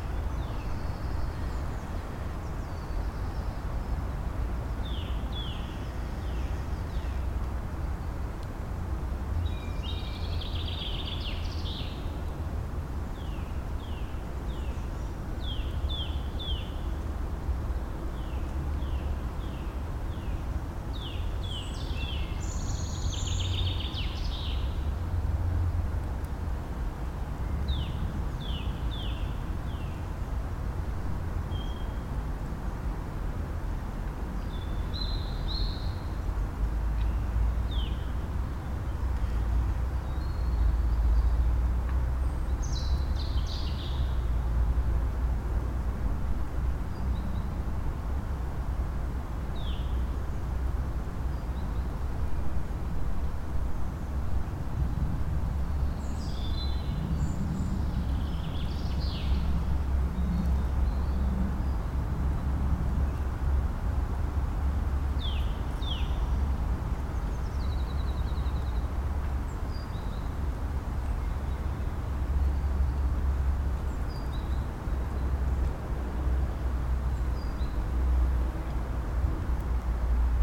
Weimar, Deutschland - OstPunkt
Seam (Studio fuer elektroakustische Musik) - klangorte - OstPunkt